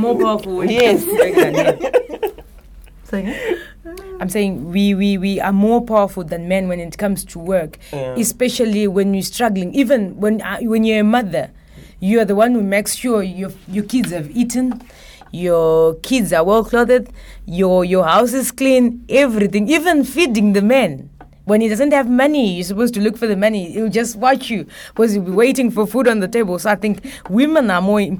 Radio Wezhira, Masvingo, Zimbabwe - Radio Wezhira sistaz...
Here, they talk about their experiences as women journalists in and for their local community and how they generally see the situation of women in their society…
The entire recordings are archived at:
23 October 2012